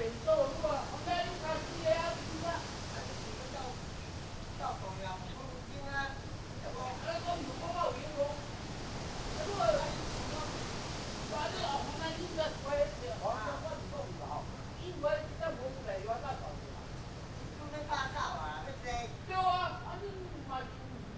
篤行十村, Magong City - under large trees
In large trees, Wind, Birds singing, Traffic Sound
Zoom H6+ Rode NT4
Penghu County, Taiwan, 23 October 2014